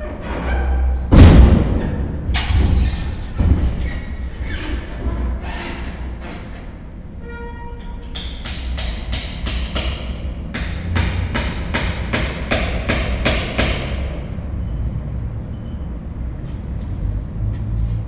Church of the Annunciation of the Blessed Virgin Mary, Prague, Czech Republic - Orthodox Church of the Annunciation of the Blessed Virgin Mary
Good Friday preparation at empty Orthodox Church of the Annunciation of the Blessed Virgin Mary in Prague 2. The gothic building is used currently by Orthodox Church.